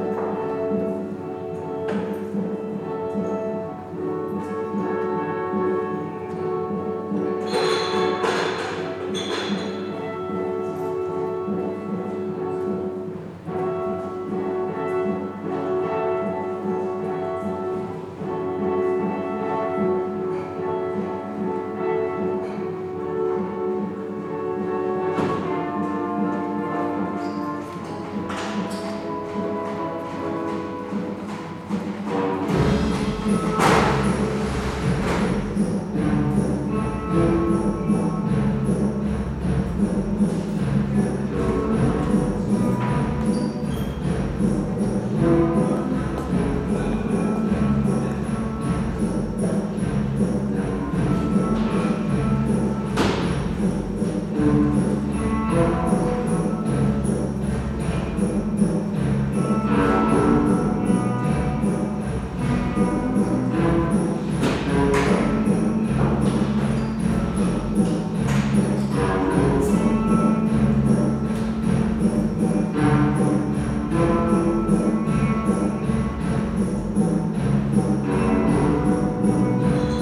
berlin, hallesches ufer: - the city, the country & me: foyer, bar
foyer/bar ambience, music of the band f.s.k. through an open door to the concert hall
the city, the country & me: december 12, 2012
Hallesches Ufer, Berlin, Germany, 12 December 2012, 22:09